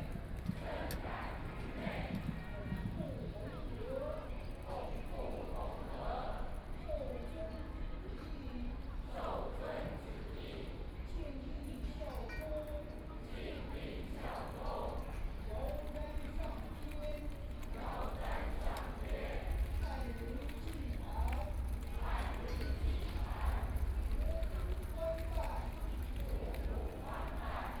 National Chiang Kai-shek Memorial Hall, Taipei - ceremony
Martial religious sects ceremony, Sony PCM D50 + Soundman OKM II